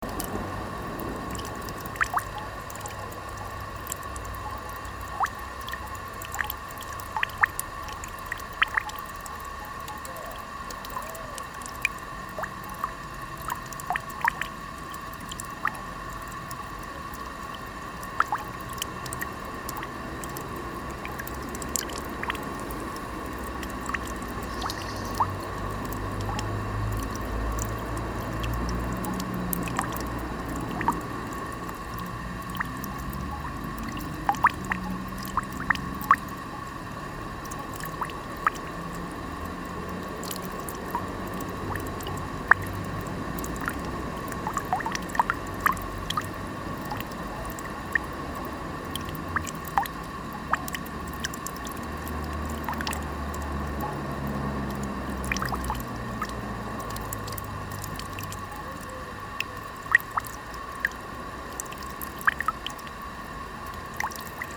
spare dops from a fountain in a public park